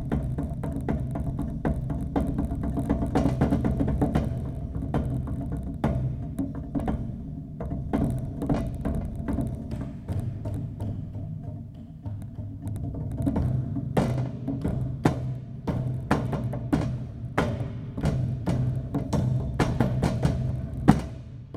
Agiofaraggo Canyon Footpath, Festos, Greece - Steel door percussion
The recording was performed at a monastery built at the end of the majestic agiofarago gorge. Inside there is a huge door made out of steel, kind of like a prison cell door, that makes a tremendous sound. I used it as a percussive instrument to make this recording. The recorder was placed on the door.